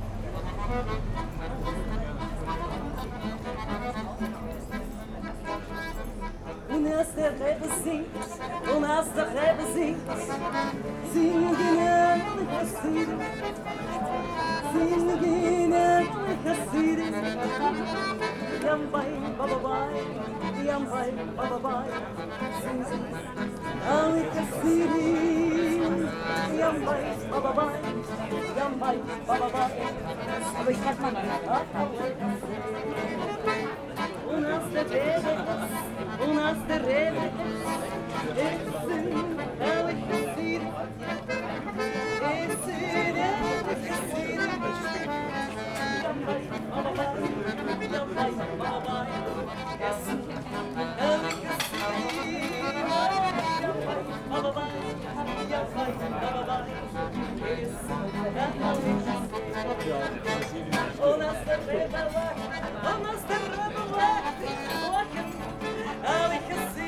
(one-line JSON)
{"title": "berlin, maybachufer: vor restaurant - the city, the country & me: in front of a restaurant", "date": "2011-07-10 21:39:00", "description": "street musicians performing a yiddish song\nthe city, the country & me: july 10, 2011", "latitude": "52.49", "longitude": "13.43", "altitude": "39", "timezone": "Europe/Berlin"}